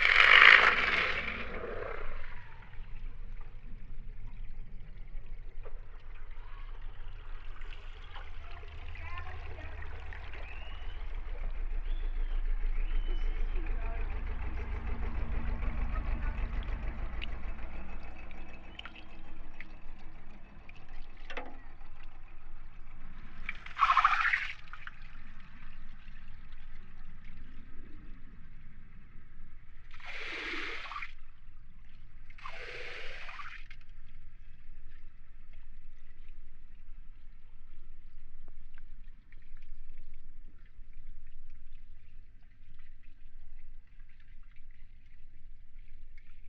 Lijnbaan, Den Haag - hydrophone rec from the bridge
Mic/Recorder: Aquarian H2A / Fostex FR-2LE
trams rumbling - a tour boat passing by